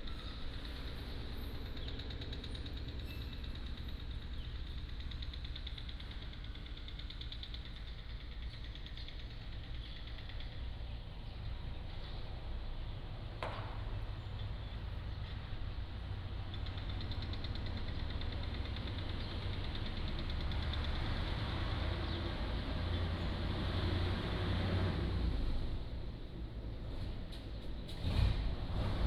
Beigan Township, Lienchiang County - small village in the morning
In front of the temple, A small village in the morning, the distant sound from Construction
14 October 2014, 07:25